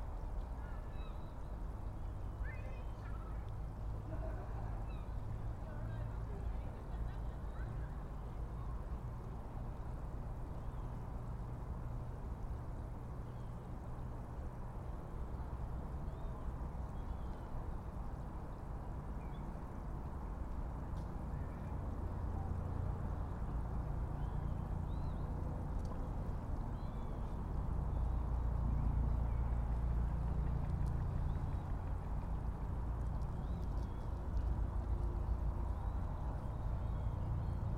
Teams, Gateshead, UK - Opposite Dunston Staithes
Stood on riverside in front of Dunston Staithes. Children passing on bikes behind. Adults with push chairs. Birds over river. Train and cars in distance. Air Ambulance, helicopter flys overhead. Sound of water going into river. You can also hear our dog and my partner lighting a cigarette. Recorded on Sony PCM-M10.